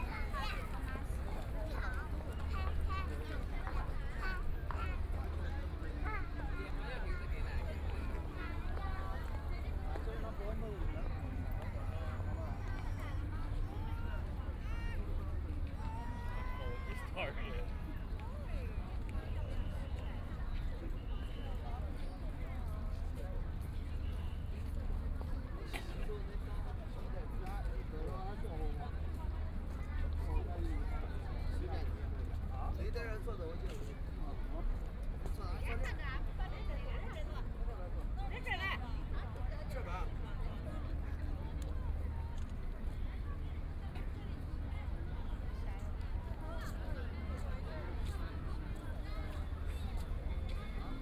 Traffic Sound, Many tourists, Traveling by boat on the river, And from the sound of people talking, Binaural recording, Zoom H6+ Soundman OKM II
Shanghai, China, 23 November 2013